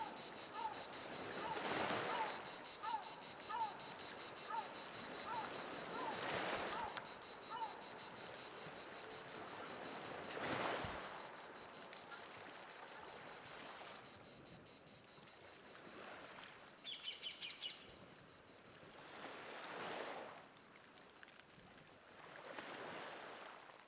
Marseille, France - Marseille Veyre - calanque - ambiance
Marseille
Parc National des Calanques de Marseille-Veyre
Ambiance
France métropolitaine, France, 21 August, 10:00